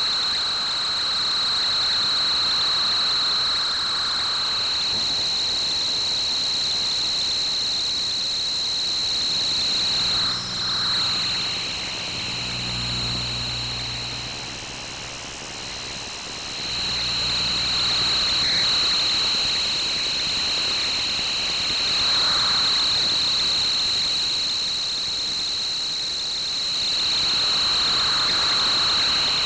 Aukštupėnai, Lithuania, screen EMF
Electromagnetic fields of information screen. Captured with SOMA Ether